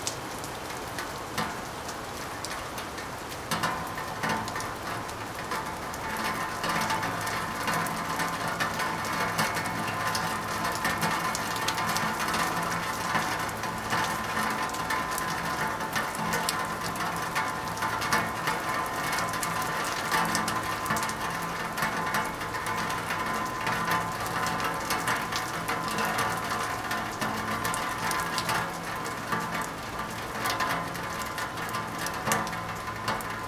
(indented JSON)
{
  "title": "Poznan, balcony - baking sheet",
  "date": "2017-07-28 11:28:00",
  "description": "rain drops drumming on a baking sheet (sony d50)",
  "latitude": "52.46",
  "longitude": "16.90",
  "timezone": "Europe/Warsaw"
}